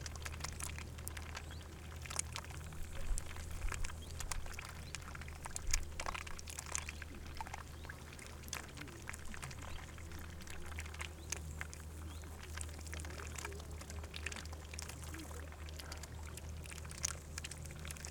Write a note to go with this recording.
Ducks on the banks of Bedřichov Dam. Sunny warm summer afternoon.